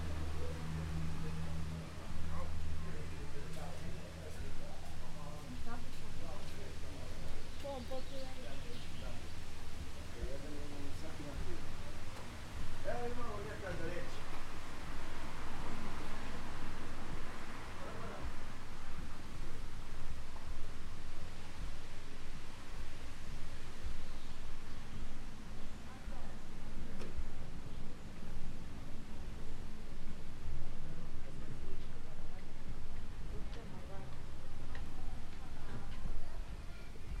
Nova Gorica, Slovenija - Ulica ob bazenu
Slow walking down fast food street.
Recorded with Zoom H5 + AKG C568 B
Nova Gorica, Slovenia